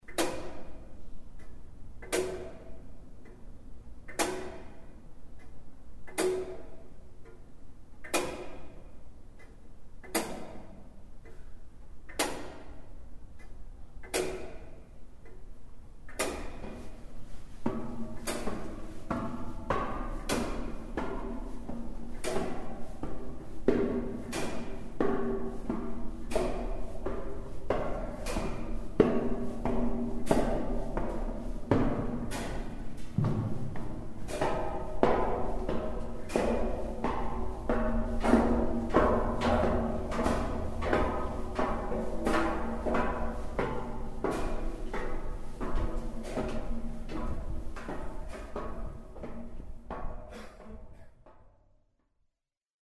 Montreal: Tour de l'Horloge - Tour de l'Horloge
equipment used: Edirol R-09
Tour de l'Horloge clock tower and ascending stairs
6 June 2008